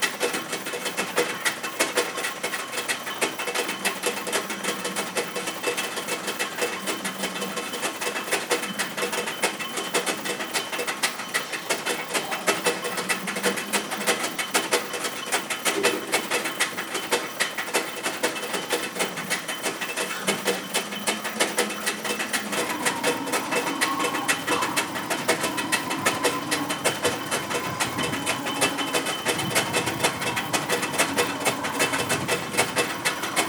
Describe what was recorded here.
Ambiente junto a la verja de una granja. Además de los sonidos ocasionales de los animales, destacan los ritmos producidos por un motor.